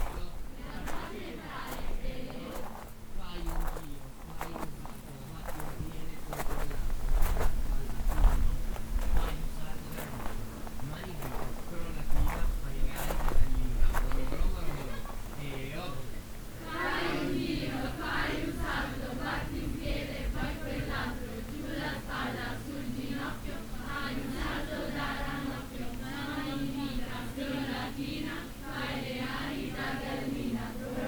morningtime at the castello, approaching on stony ground, catholic pathfinders playing a game while chanting
soundmap international: social ambiences/ listen to the people in & outdoor topographic field recordings
castello, 26 July 2009, 18:53